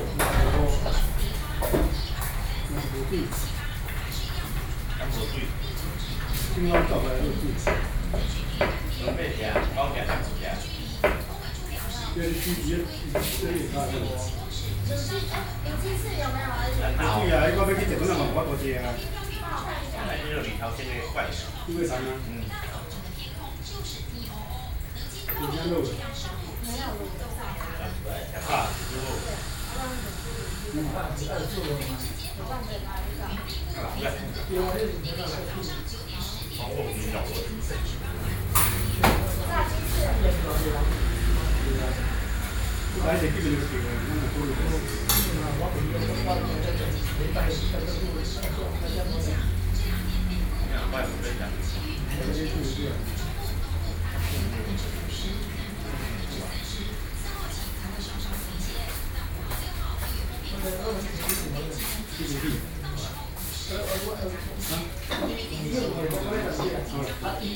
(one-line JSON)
{"title": "Beitou, Taipei - In the restaurant", "date": "2013-04-01 12:26:00", "description": "In the restaurant, Several workers are to discuss the news on television, Sony PCM D50 + Soundman OKM II", "latitude": "25.14", "longitude": "121.50", "altitude": "24", "timezone": "Asia/Taipei"}